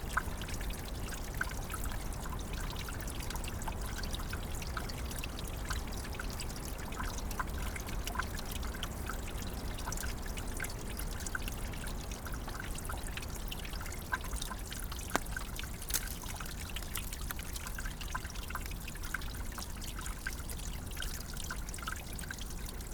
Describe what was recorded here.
Little Stream water under an ice cover, further sounds of a snow storm. Temp -4°C, 50Km/h Wind from north, little snow fall, alt 1200m, Recording gear : Zoom H6 with DPA 4060 in a blimp (quasi binaural)